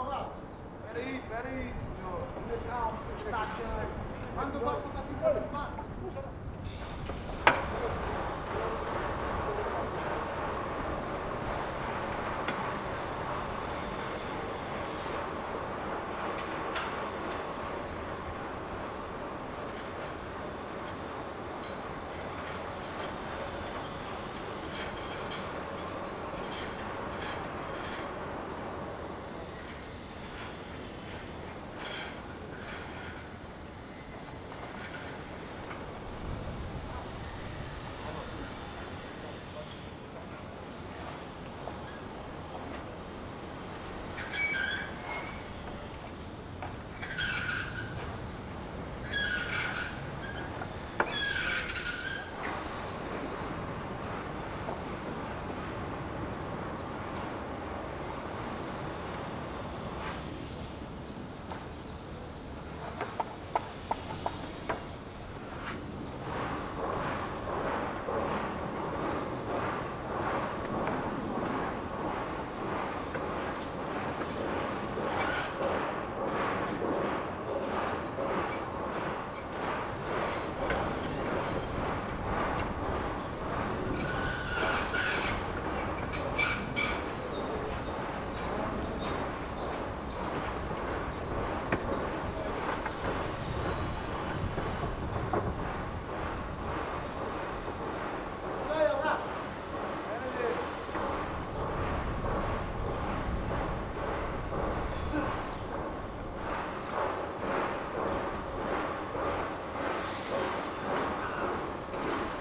recorded in the garden of goethe institut, workers fixing a roof. various sounds of construction works in this part of the city
Lisbon, Portugal, 30 June 2010